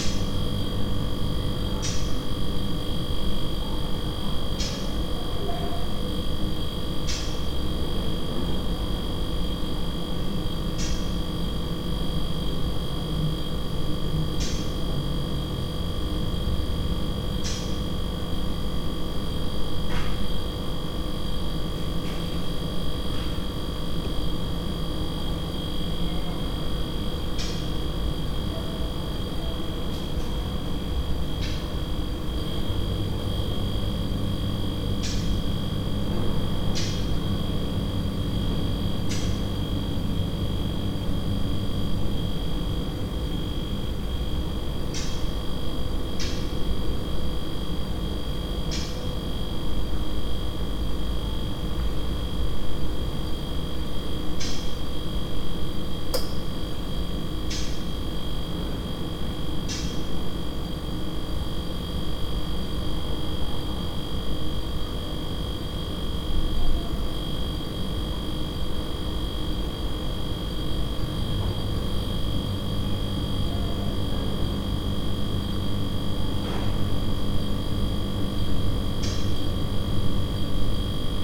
Inside the Kaunas Botanical Garden's greenhouse. Some air/water pump working.
Kauno apskritis, Lietuva